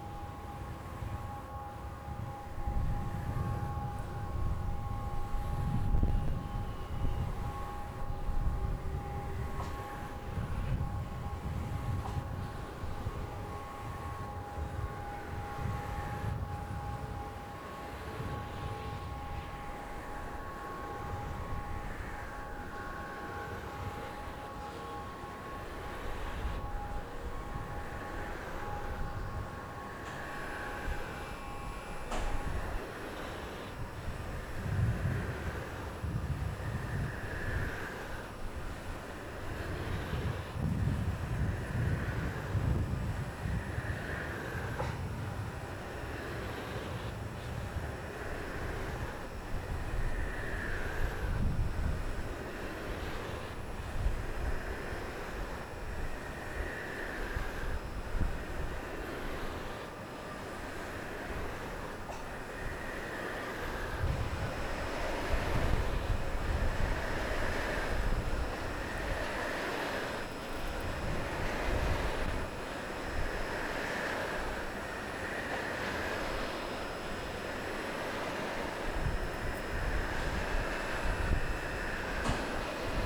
Granvilliers, France - Eoliennes
Wind Machine in the Fields
Binaural recording with Zoom H6
20 August 2015, 15:38